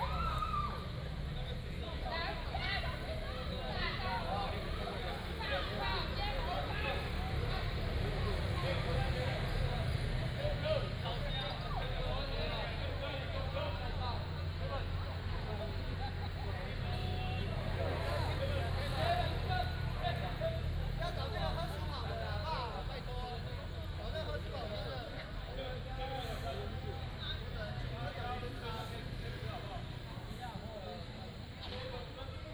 {
  "title": "本福村, Hsiao Liouciou Island - Near the grill",
  "date": "2014-11-01 19:46:00",
  "description": "in front of the temple, Near the grill",
  "latitude": "22.35",
  "longitude": "120.38",
  "altitude": "33",
  "timezone": "Asia/Taipei"
}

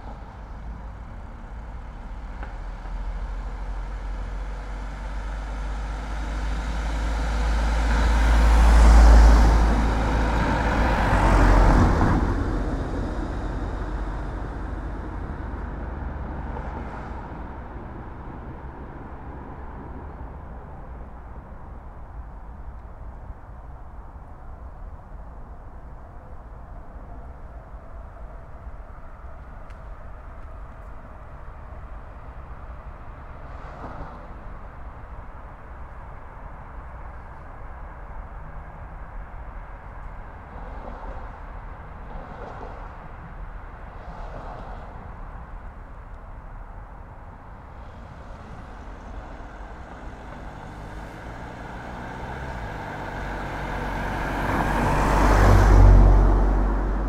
{
  "title": "East Park Road, Low Fell, Gateshead, UK - East Park Road facing West",
  "date": "2016-08-15 21:30:00",
  "description": "Facing West on East Park Road. Cars drive past on Saltwell Road. Train can be heard going south on East Coast mainline. Recorded on Sony PCM-M10.",
  "latitude": "54.94",
  "longitude": "-1.61",
  "altitude": "62",
  "timezone": "Europe/London"
}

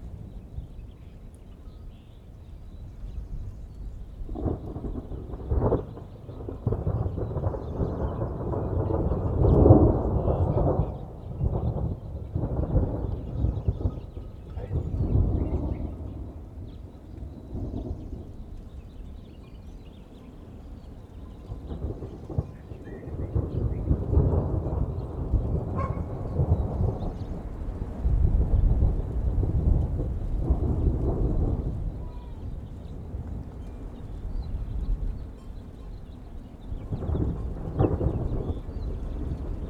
{
  "title": "Las Narices, Coyotillos - Thunders and sheperd in the mountain Las Narices",
  "date": "2019-06-17 14:00:00",
  "description": "At the mountain Las Narices close to Coyotillos, storm is arriving and some thunders are clapping, before the rain. The sheperd and their goats are coming down, and Luz is coming to me at the end to have a chat. Some dogs are barking and coming down with the goats.\nRecorded by a ORTF setup with 2 Schoeps CCM4 Microphones in a Cinela Windshield. On a Sound Devices 633 recorder\nSound Ref MXF190617T10\nGPS 23.315748 -101.184082\nRecorded during the project \"Desert's Light\" by Félix Blume & Pierre Costard in June 2019",
  "latitude": "23.32",
  "longitude": "-101.18",
  "altitude": "2302",
  "timezone": "America/Mexico_City"
}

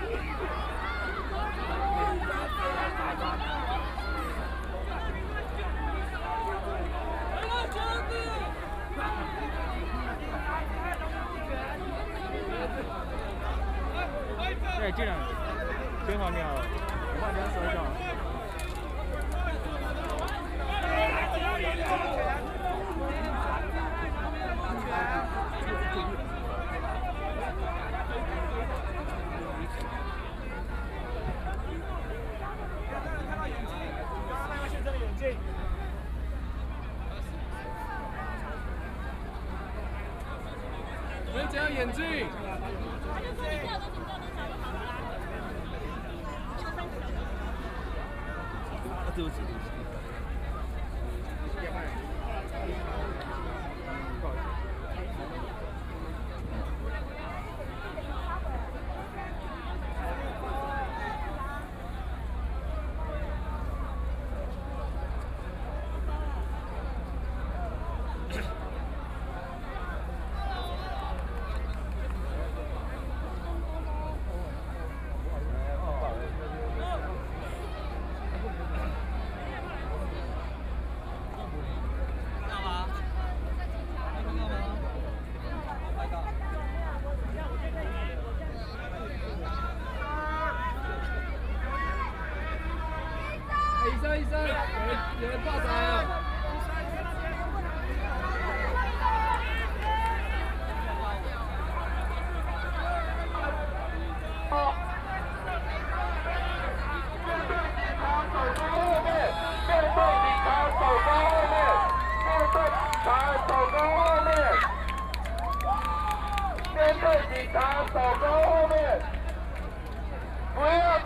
{
  "title": "中正區幸福里, Taipei City - Protest",
  "date": "2014-04-28 17:28:00",
  "description": "No-nuke Movement occupy Zhong Xiao W. Rd.Protest, Police and public confrontation\nSony PCM D50+ Soundman OKM II",
  "latitude": "25.05",
  "longitude": "121.52",
  "altitude": "12",
  "timezone": "Asia/Taipei"
}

